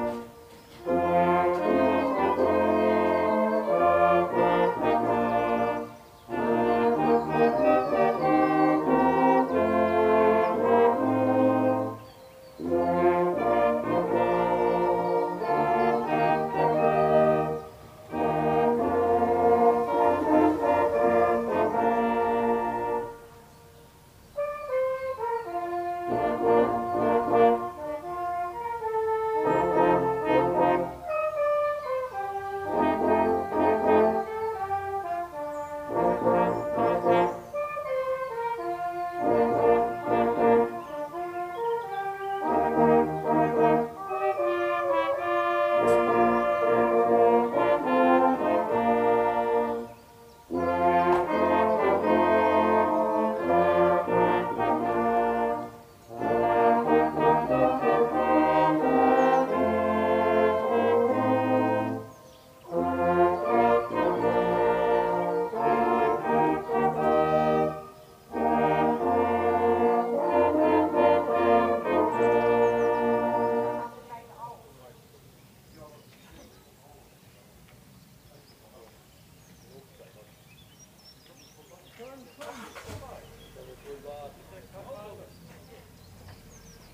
propach, trad. brassband easter concert
easter morning, a local brassband on tour through the small villages around, playing some trad. tunes.
recorded apr 12th, 2009.